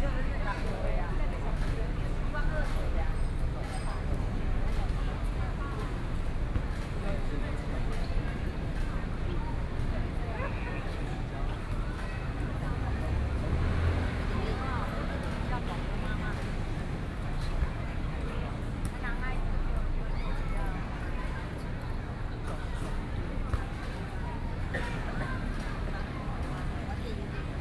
Yongkang Park, Taipei City - Holiday in the Park
Holiday in the Park, A lot of tourists, The sound of children playing games
Sony PCM D50+ Soundman OKM II
Taipei City, Taiwan, 27 April